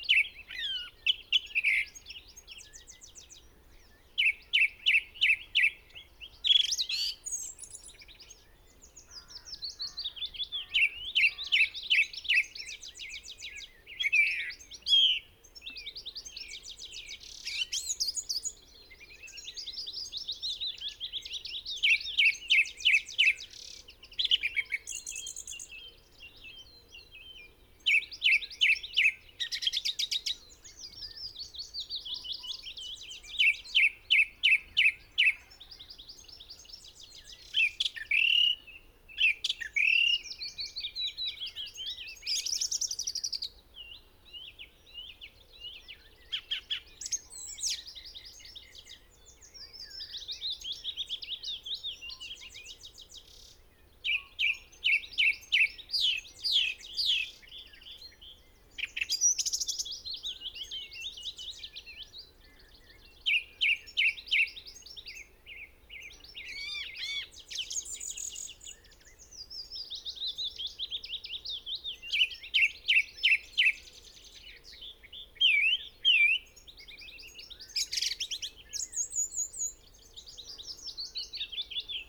song thrush song ... Olympus LS 14 integral mics ... bird call ... song ... willow warbler ... chaffinch ... crow ... goldfinch ... blackbird ... pheasant ... recorder clipped to branch ...